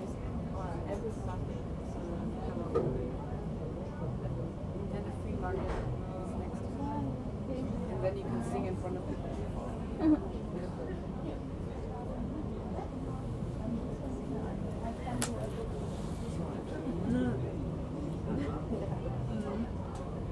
Fernsehturm observation floor, Panoramastraße, Berlin, Germany - Fernsehturm quiet observation floor at the top
In the observation floor there is a total disconnect between what is seen and what is heard. All ones attention is on the panorama of Berlin outside, but thick layers of glass mean that all you hear is from inside. Bland music plays from the bar, wine glasses sometimes chink, visitors murmur in low voices pointing at the city, clothing swishes. The atmosphere is rather subdued. Everyone is concentrating on the spectacular view.